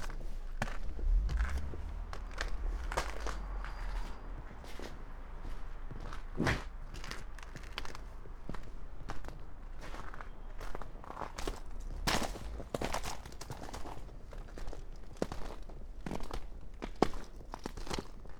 Weyer, Villmar, Deutschland - walking on frozen groud
village Weyer, Villmar, walking around the church on frozen ground, -12°
(Sony PCM D50, Primo EM172)
6 January 2017, ~22:00